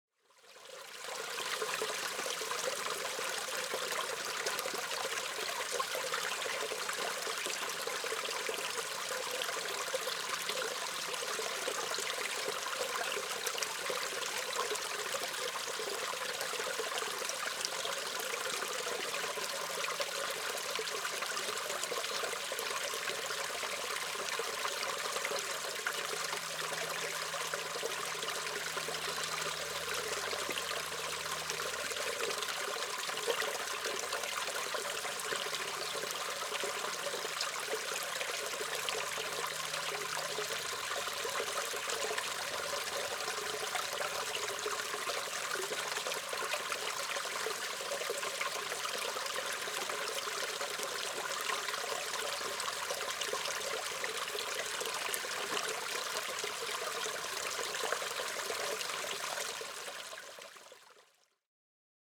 Walking Holme Water Trough and drain
A water trough on Kilnbent Road, nearBrownlowhill reservoir
18 April 2011, 13:00